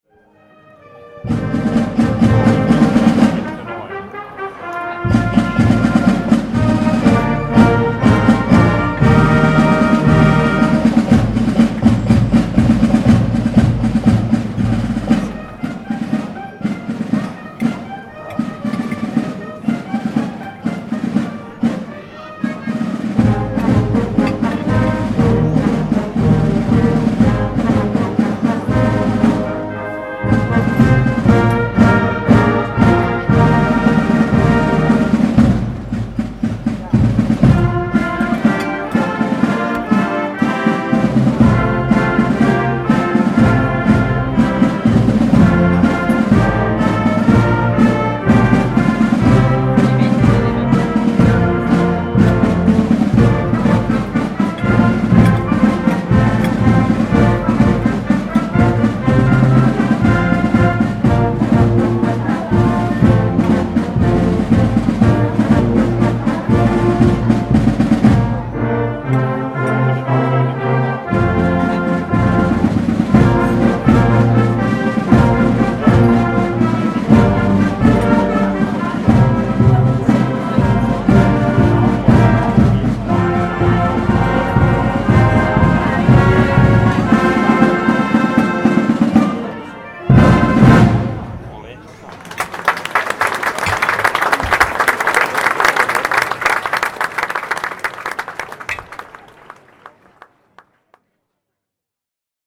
Poschiavo, Schweiz - Blechmusik von Poschiavo
Blechmusik in Poschiavo / Puschlav in der italienischen Schweiz zum Nationalfeiertag